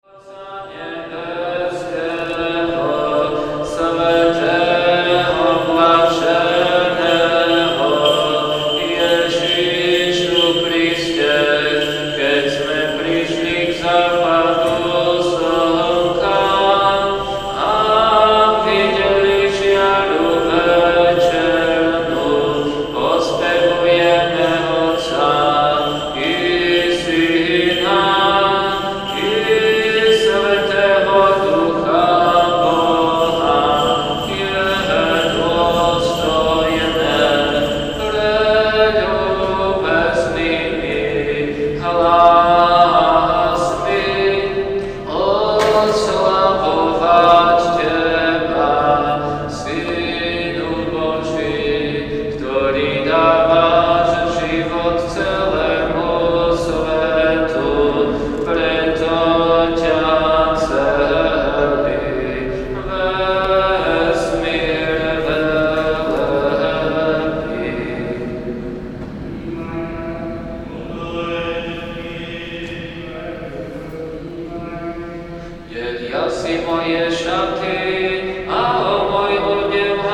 Spalena street, Saint Trinity church
eastern celebration of Slovak GreekCatolic church.